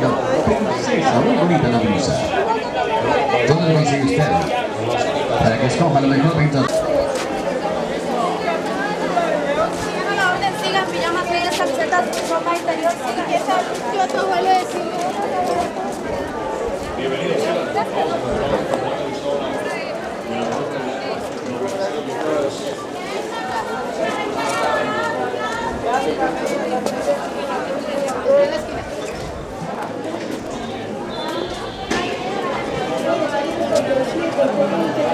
Bogota, San Victorino
San Victorino es el supermall de los pobres... en todo el centro de bogotá es un foco de resistencia comercial..encuentras todo de todo...
5 March, 09:06